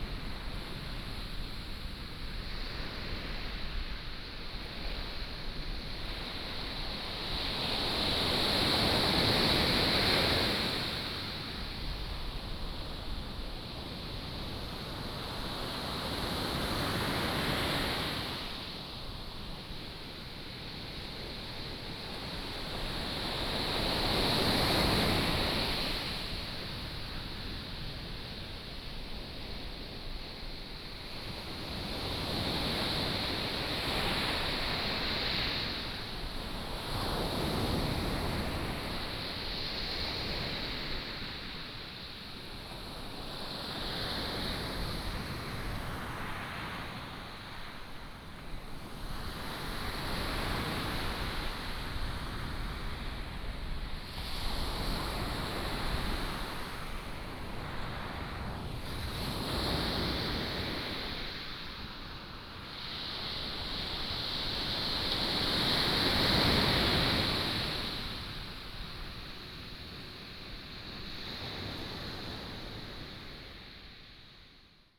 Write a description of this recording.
sound of the waves, The sound of thunder